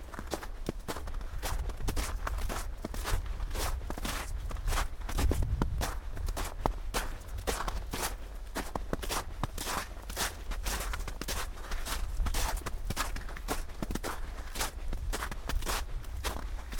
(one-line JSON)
{"title": "sonopoetic path, Maribor, Slovenia - walking poem", "date": "2013-01-22 17:29:00", "description": "snow, steps, spoken words, almost dark", "latitude": "46.57", "longitude": "15.65", "altitude": "289", "timezone": "Europe/Ljubljana"}